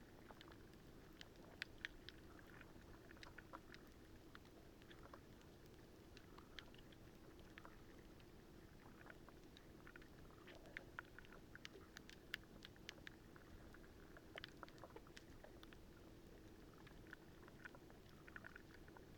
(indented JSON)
{
  "title": "Platanias, Crete, the marina underwater",
  "date": "2019-04-26 20:20:00",
  "description": "hydrophone in the calm waters of the marina",
  "latitude": "35.52",
  "longitude": "23.91",
  "altitude": "2",
  "timezone": "Europe/Athens"
}